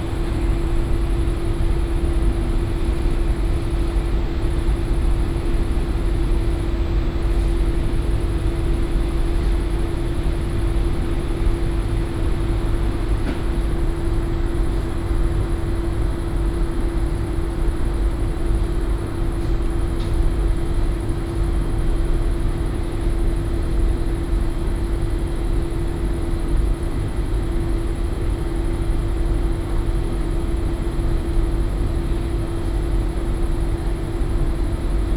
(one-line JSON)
{"title": "Sobieskiego housing complex - bench in front of laundry", "date": "2019-08-11 21:45:00", "description": "(binaural recording) recorded in front of a laundry. hum of commercial washing machines. employees talking a bit. (roland r-07 + luhd PM-01 bins)", "latitude": "52.46", "longitude": "16.91", "altitude": "95", "timezone": "Europe/Warsaw"}